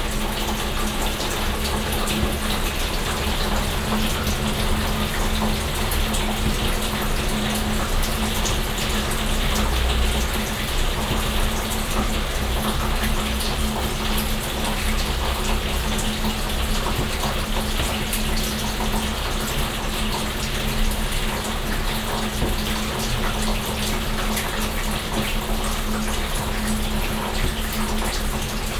refrath, lustheide, garagenabfluss bei regen
garagenabfluss und dach bei starkem regen
soundmap nrw - social ambiences - sound in public spaces - in & outdoor nearfield recordings